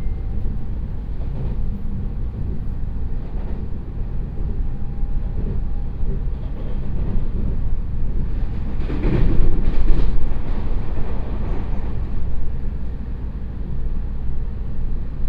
Huatan Township, Changhua County - In a railway carriage
In a railway carriage